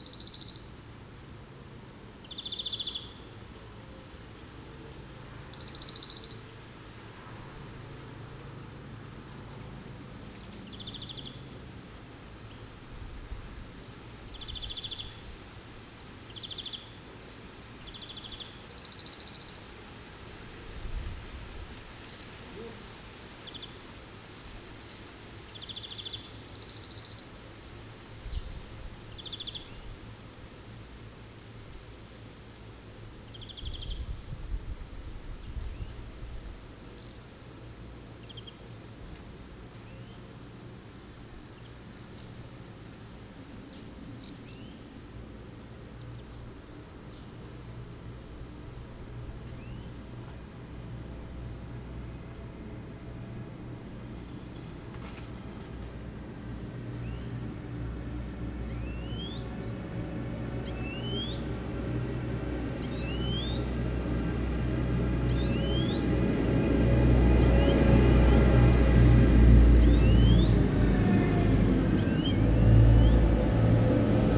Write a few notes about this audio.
Near the bridge of the small village called Gyé-Sur-Seine, we are near the Seine, in the Champagne area, in the heart of the champagne vineyard. This recording is a walk in the center of the village : the Seine river, a square with a small power station, enormous tractors passing by and the church ringing. I don't identify the bird song, please help if you can !